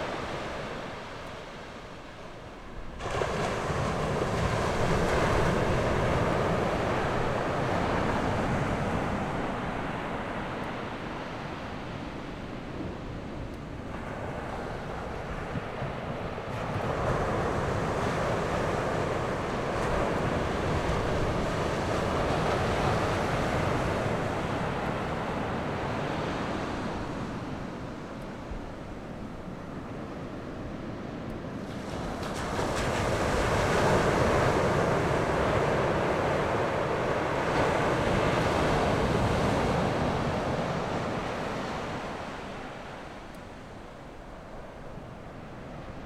Beigan Township, Taiwan - sound of the waves
Sound of the waves
Zoom H6 +Rode NT4
13 October 2014, 17:46